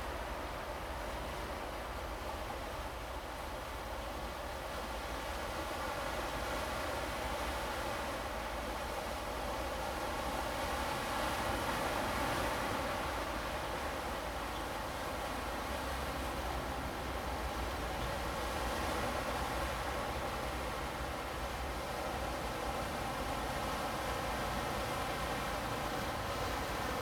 Sec., Huhai Rd., Zhongshan Dist., Keelung City - On the coast
sound of the waves, Rocky, On the coast, Traffic Sound, Thunder
Zoom H2n MS+XY +Sptial Audio
Keelung City, Taiwan, 2 August 2016